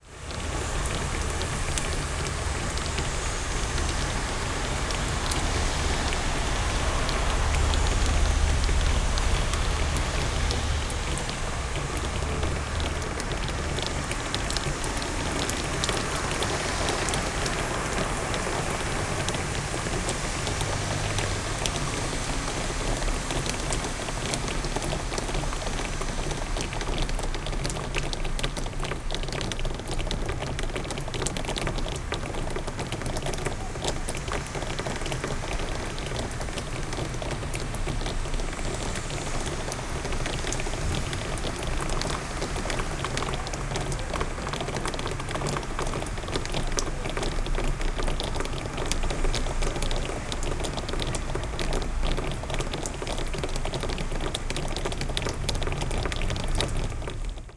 {"title": "Montreal: 4702 Queen Mary (balcony) - 4702 Queen Mary (balcony)", "date": "2008-09-06 16:30:00", "description": "equipment used: M-Audio Microtrack\nbalcony in the rain", "latitude": "45.49", "longitude": "-73.62", "altitude": "87", "timezone": "America/Montreal"}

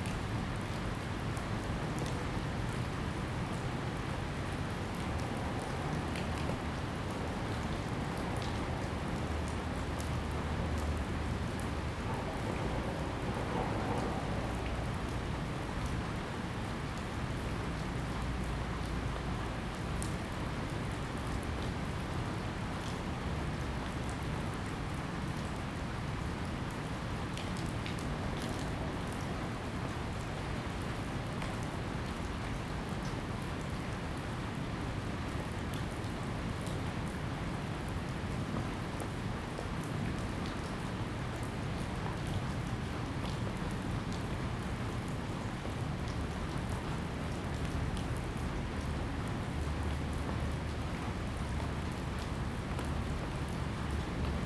{"title": "Taipei Railway Workshop, Taiwan - Thunderstorm", "date": "2014-09-24 17:23:00", "description": "Thunderstorm, Disused railway factory\nZoom H2n MS+XY", "latitude": "25.05", "longitude": "121.56", "altitude": "9", "timezone": "Asia/Taipei"}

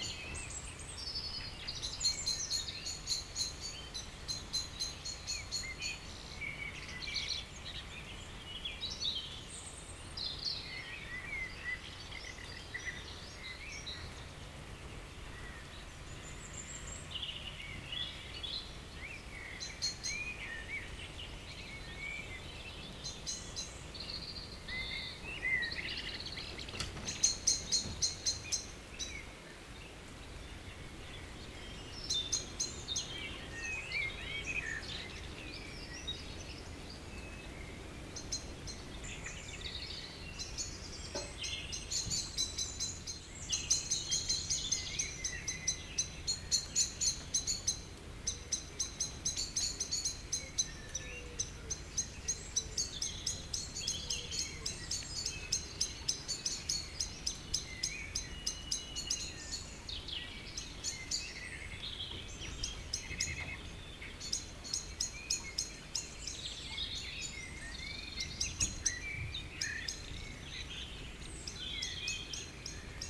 {"title": "Tregastel bourg, Pleumeur-Bodou, France - Evening village birds [Tregastel]", "date": "2019-04-22 20:25:00", "description": "Vers 20hr. Temps humide. présences de volatiles qui font des bruits.\nAround 8 pm. Humid weather. birds sings.\nApril 2019.", "latitude": "48.81", "longitude": "-3.50", "altitude": "31", "timezone": "Europe/Paris"}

